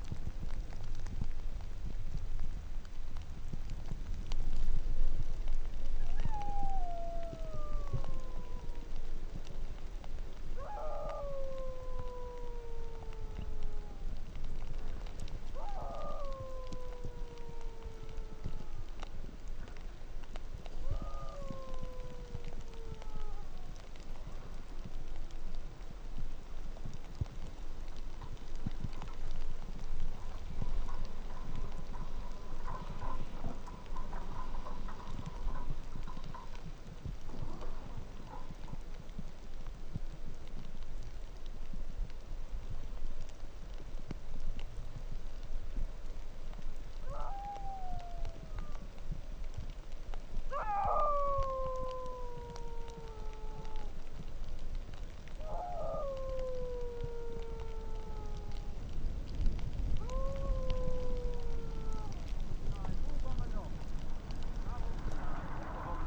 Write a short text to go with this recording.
Yagis antenna array near the 3rd valley, dogsled huskies crying, snowplow, rain on snow.